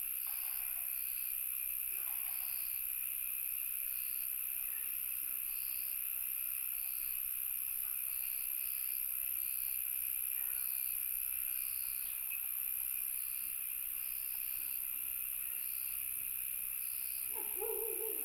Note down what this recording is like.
Dogs barking, Frogs chirping, Bird sounds